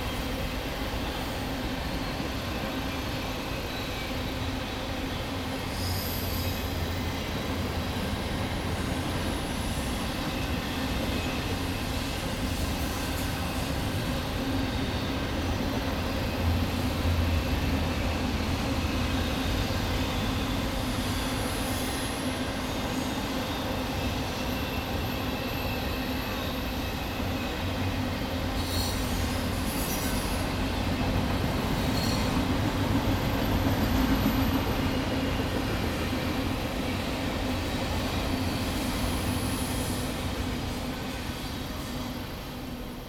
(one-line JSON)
{"title": "Castlewood State Park, Ballwin, Missouri, USA - Castlewood Freight Trains", "date": "2020-09-15 18:37:00", "description": "Castlewood State Park. Recording from about 60 feet (18 meters) away of two freight trains crossing bridge over road. A train first passes from West to East followed almost immediately by one going the other direction.", "latitude": "38.55", "longitude": "-90.54", "altitude": "136", "timezone": "America/Chicago"}